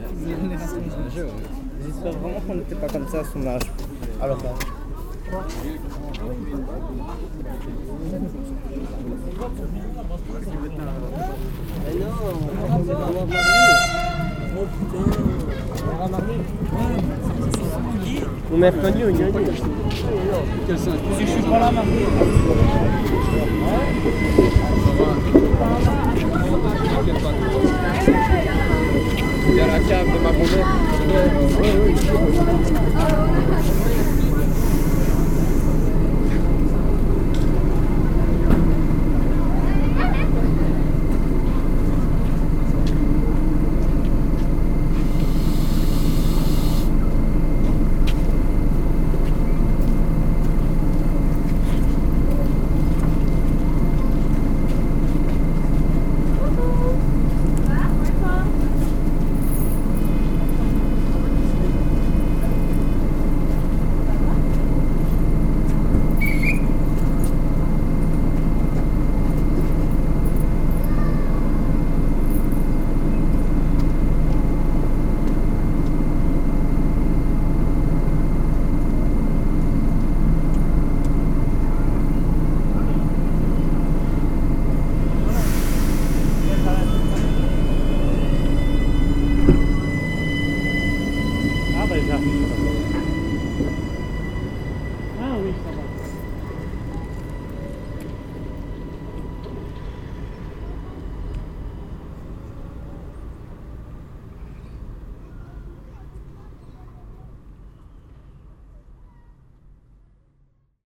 After a schoolday, the train is arriving in Court-St-Etienne station. It's a "Desiro", a new train, running here since a few monthes. Map location is into a no man's land. That's normal. There's a new platform here (finished in mid-2014.
26 September 2014, 16:26